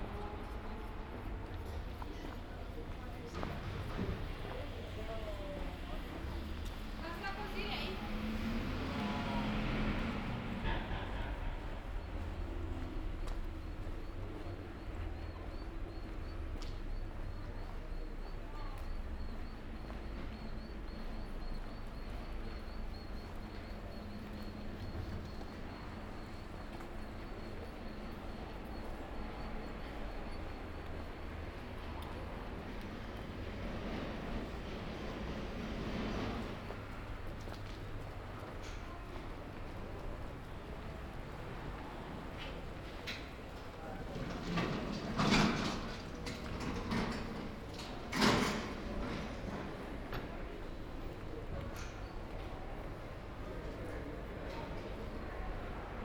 Ascolto il tuo cuore, città. I listen to your heart, city. Several chapters **SCROLL DOWN FOR ALL RECORDINGS** - “Shopping au marché ouvert sur la place at the time of covid19” Soundwalk
“Shopping au marché ouvert sur la place at the time of covid19” Soundwalk
Chapter XXXI of Ascolto il tuo cuore, città. I listen to your heart, city.
Thursday April 2nd 2020. Shopping in the open air square market at Piazza Madama Cristina, district of San Salvario, Turin, twenty three days after emergency disposition due to the epidemic of COVID19.
Start at 10:44 a.m., end at h. 11:11 a.m. duration of recording 26’58”
The entire path is associated with a synchronized GPS track recorded in the (kml, gpx, kmz) files downloadable here:
Torino, Piemonte, Italia, 2 April